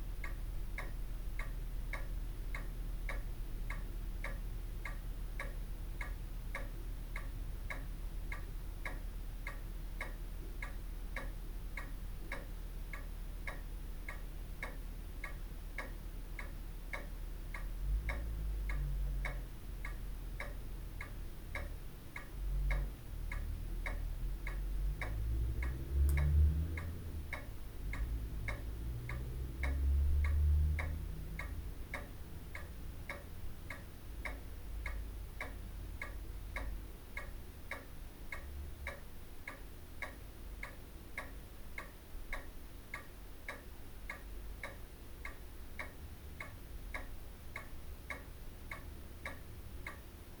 front room ambience ... recorded with olympus ls 14 integral mics ... a pendulum wall clock ticks on ... the heartbeat and background to family life over many years ... dad passed away with a covid related illness in dec 2020 ... he was 96 ... registered blind and had vascular dementia ... no sadness ... he loved and was loved in return ... heres to babs and jack ... bless you folks ... my last visit to the house ...